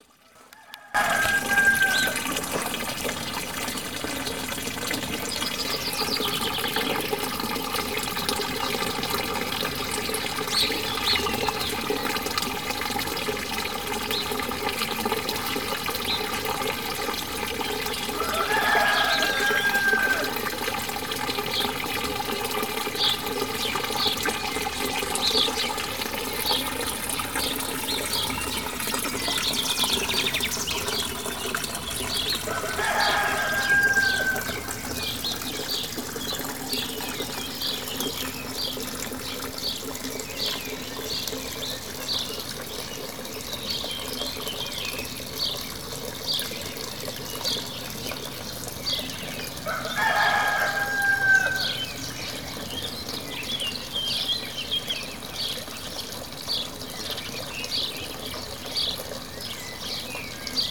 La Combe-de-Lancey, France - le hameau de la chapelle séveille doucement
face à l’église à proximité du lavoir.
Les coqs du hameau en appellent au réveil de tous
facing the church near the laundry.
The roosters of the hamlet call for the awakening of all
22 April 2019